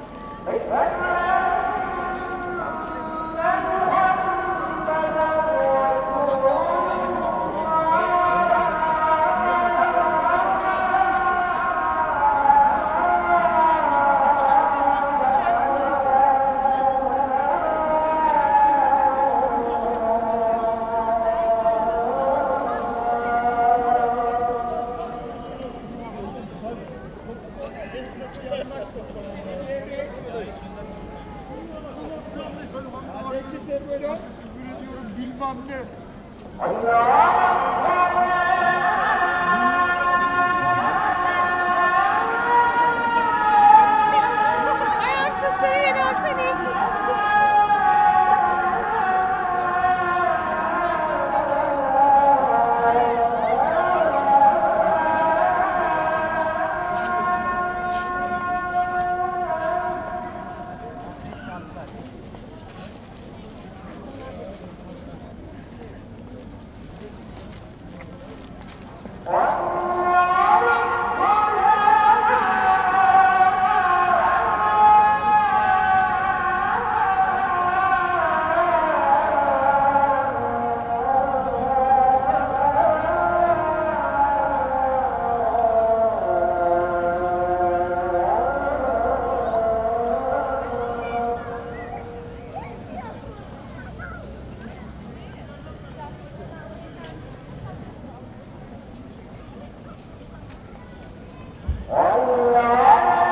call for prayer, singing, people walking by
Istanbul/Istanbul Province, Turkey